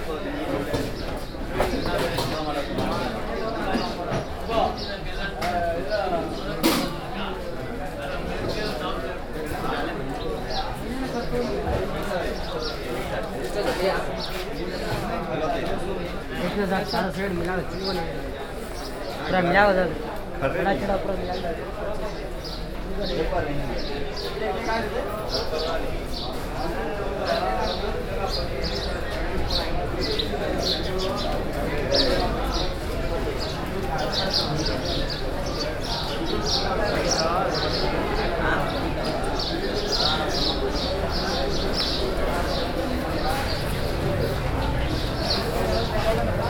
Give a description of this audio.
India, Karnataka, Bangalore, Krishnarajendra-market